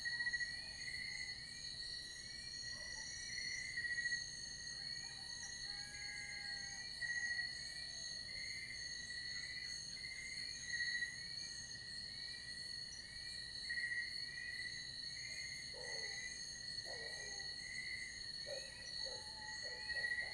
Sounds captured some hours before dawn by the valley along Calamba Road between Tagaytay Picnic Grove and People´s Park in the Sky. Birds, insects, lizards, roosters waking up and dogs barking. Less traffic by this hour of late night/early morning. WLD 2016